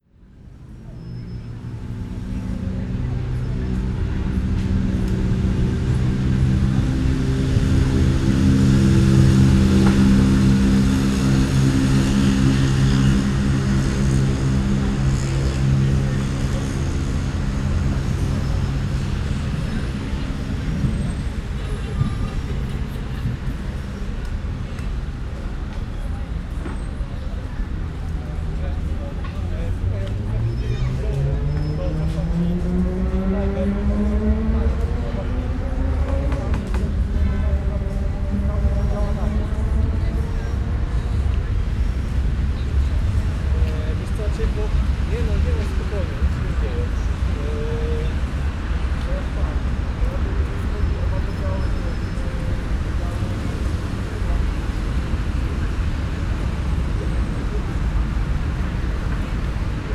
Gdańsk, Polska - Kunszt Wodny 1
Dźwięk nagrany podczas Pikniku realizowanego przez Instytut Kultury Miejskiej przy Kunszcie Wodnym